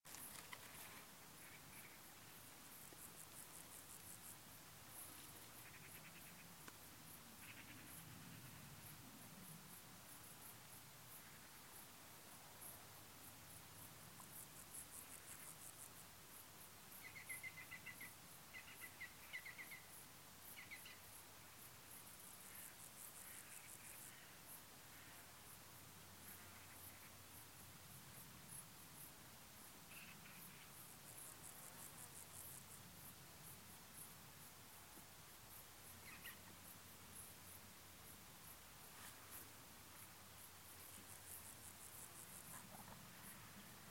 Sunday in the open countryside
Sunday afternoon in the open countryside, airy and calm.